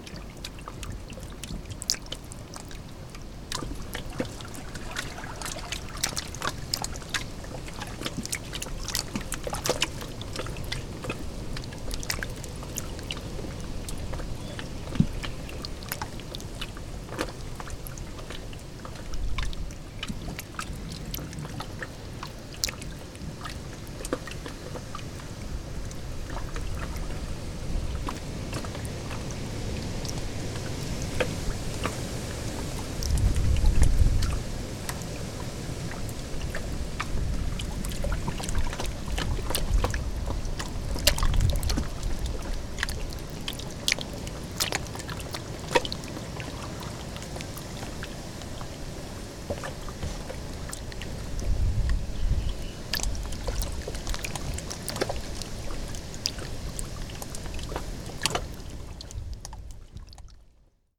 Šlavantai, Lithuania - Water under tree roots by the lakeside
Tiny waves crashing against tree roots on the shore of lake Šlavantas. Recorded with Olympus LS-10.